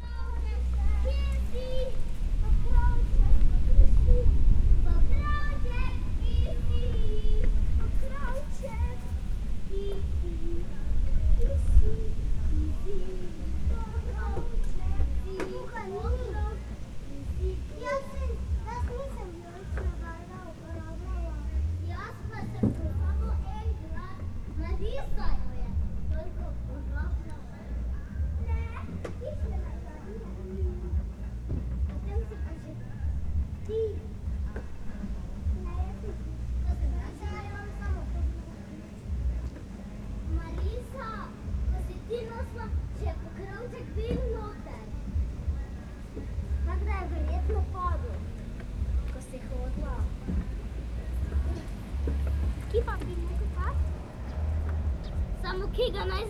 Maribor, Slovenija - Where is "The Famous Pokrovček"?
A playground for children as part of a cafe. Three little girls seeking "The Famous Pokrovček", which is nothing more than a regular bottle cap. They even made up a song to summon it. At the end they find a ribbon and command it, to find the cap - Pokrovček. (Pokrovček = Bottle cap)